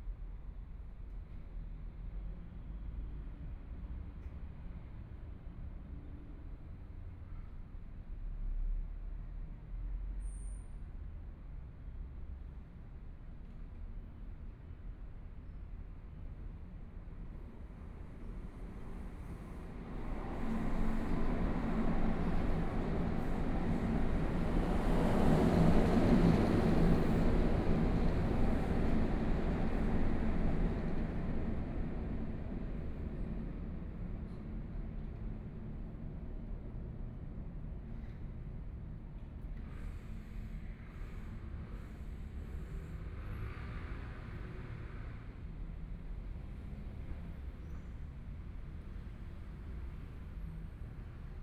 Taipei, Taiwan - In the track below
In the track below, By the sound of trains, Traffic Sound, Binaural recordings, Zoom H4n+ Soundman OKM II
Taipei City, Taiwan, 20 January, ~18:00